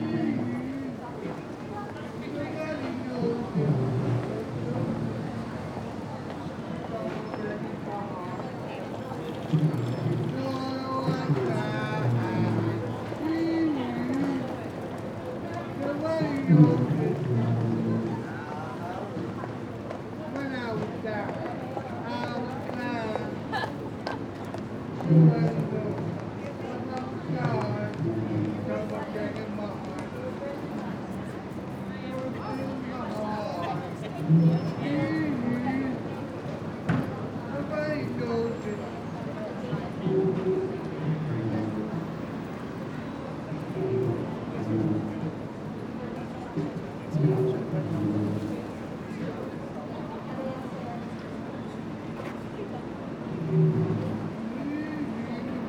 City Centre - Drunk Man Singing